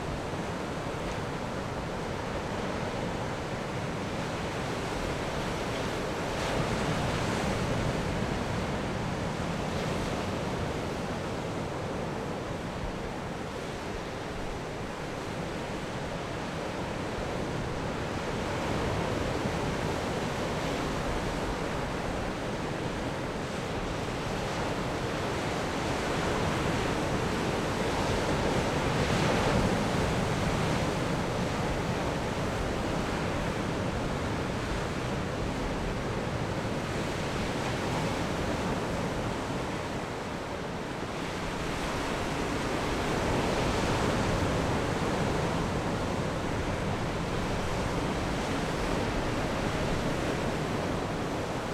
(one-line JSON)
{"title": "公舘村, Lüdao Township - behind a large rock", "date": "2014-10-30 15:35:00", "description": "In a large rock behind, sound of the waves, Traffic Sound\nZoom H6 +Rode NT4", "latitude": "22.64", "longitude": "121.50", "altitude": "20", "timezone": "Asia/Taipei"}